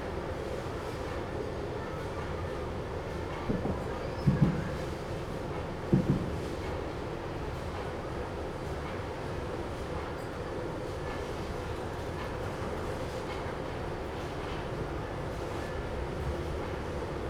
建國陸橋, Changhua City - Under the bridge
Under the bridge, The train runs through, Traffic sound, Factory machinery operation sound
Zoom H2n MS+XY